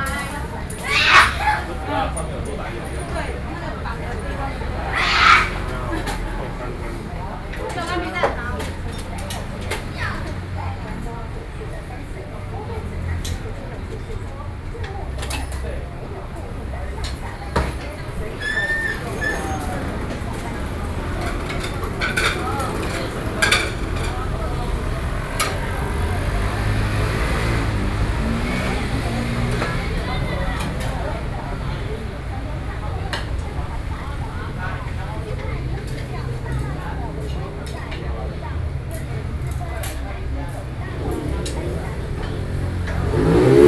Zhongzheng Rd., Ruifang Dist., New Taipei City - Small restaurant waiting for food
Ruifang District, New Taipei City, Taiwan